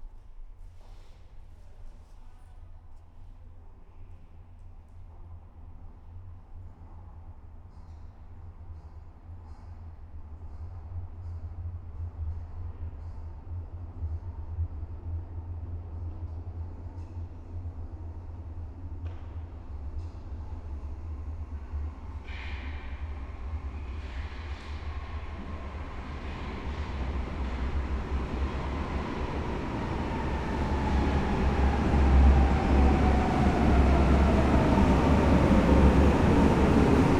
klosterstr., berlin - subway station ambience
a few meters away from the previous location. trains in both directions. silent station.
Berlin, Deutschland, 21 November 2010, 18:25